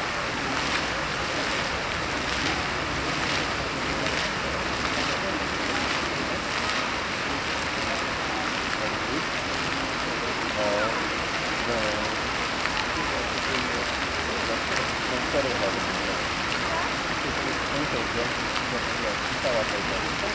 Skwer 1 Dywizji Pancernej WP, Warszawa, Pologne - Multimedialne Park Fontann (d)
Multimedialne Park Fontann (d), Warszawa